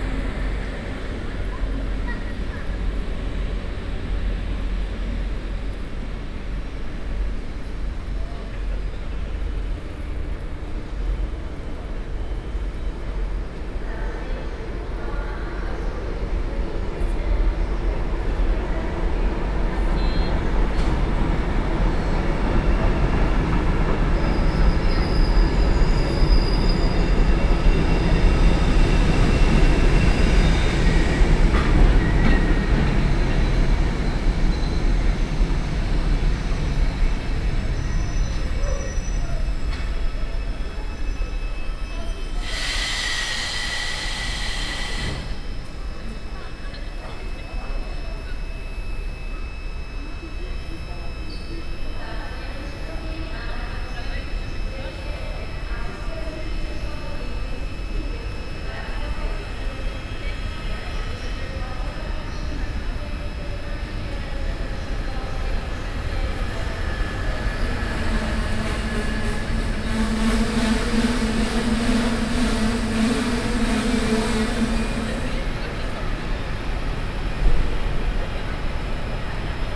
Brussels, Gare du Nord / Noordstation.